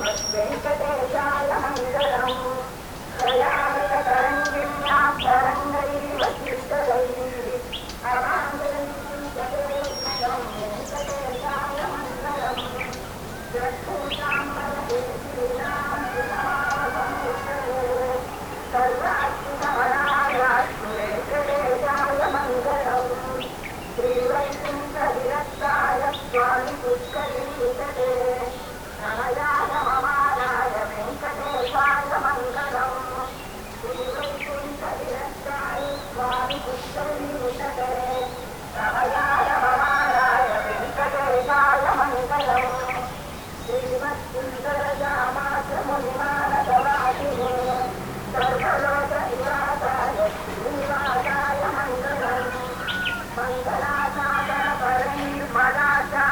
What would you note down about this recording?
dawn Munnar - over the valley part one